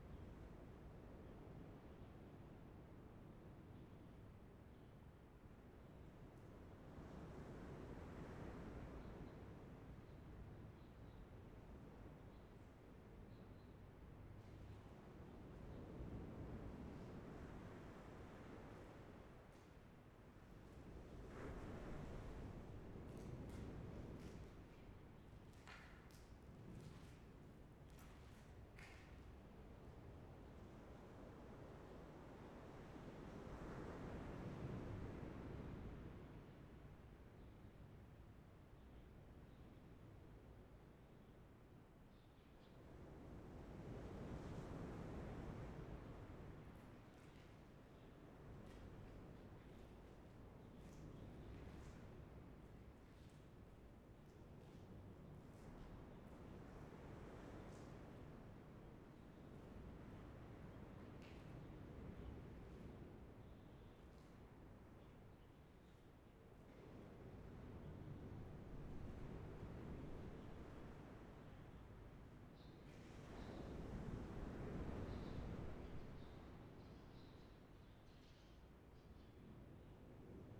午沙村, Beigan Township - Abandoned waiting room
Sound of the waves, Abandoned waiting room
Zoom H6 XY